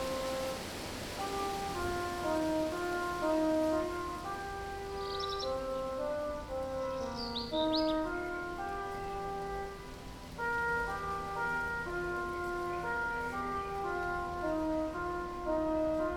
Stary Sącz, St. Clara of Assisi Cloister
bells at St. Clara of Assisi Cloister